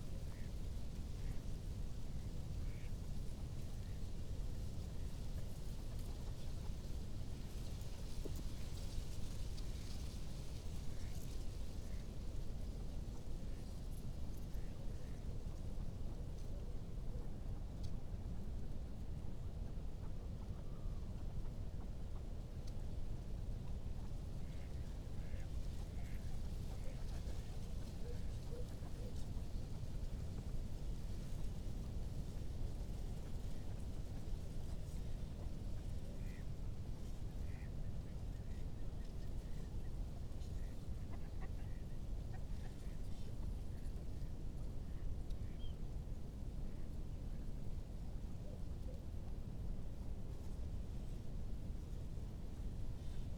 groß neuendorf, oder: river bank - the city, the country & me: reed
stormy afternoon, reed rustling in the wind, some ducks and a barking dog in the distance
the city, the country & me: january 3, 2015
Letschin, Germany, 3 January 2015, 15:20